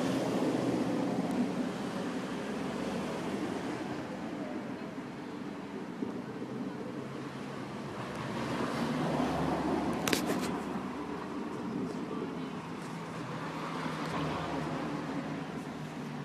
Via Enrico Fermi, 145
Bus route and sidewalk
7 March 2011, 10:09